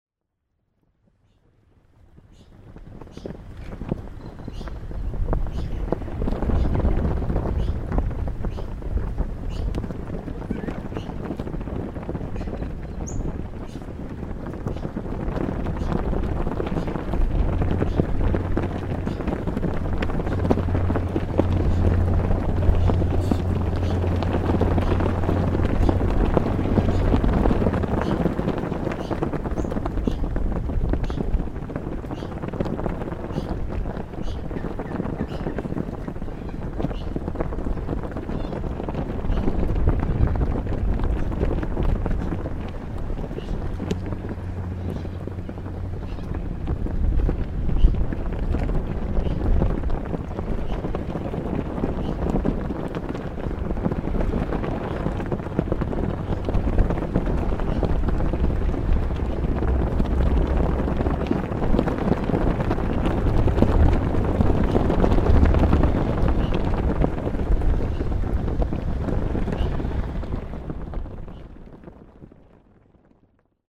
Flags Near Wharf, Goolwa, South Australia - Flags Near Wharf
While at Middleton for a few days, Kerry & I stopped in at Goolwa. Since it was a bit too windy for recording anything quiet, I decided to try recording the blowing flags outside the Goolwa Information Centre.
Between vehicles driving into the car park, just to the right of the flags, and over the nearby Hindmarsh Island bridge, I managed to get some usable sound.
Recorded using a pair of Audio Technica 3032's on a Schneider disk directly into a Sound Devices 702 recorder.
Recorded at 10:30am on Monday 22 December 2008